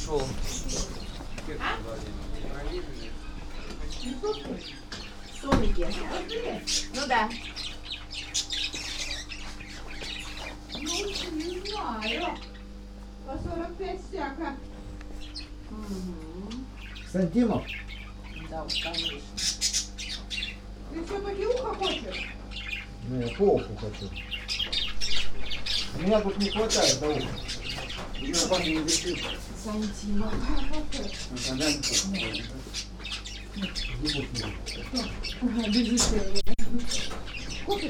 inside the zoomarket: local russians talk, mice and birds
Tallinn, Estonia